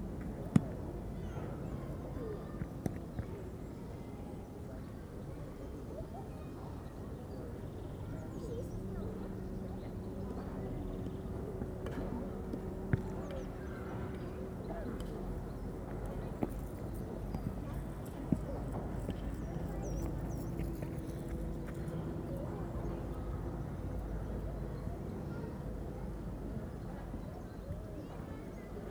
Palace Park, Am Schloßpark, Berlin, Germany - 3 days of lockdown; park in bright sun, slightly less cold
Slightly less cold but not so much different from the day before. More adults exercising or walking with a friend. No planes and still few birds singing, except wood pigeons and a nuthatch. One women 'omms' softly while during her slow yoga movements. 50 meters away 3 teenage girls sit together on a bench. I watch as a police car stops and 3 officers walk across the grass to speak to them. Identity cards are checked. Verbal authority is applied and one of the girls gets up to move to a more distant seat. It's first time I've seen the coronavirus rules being enforced. Noticeable that when the police return to their car they do not keep 1.5m from each other!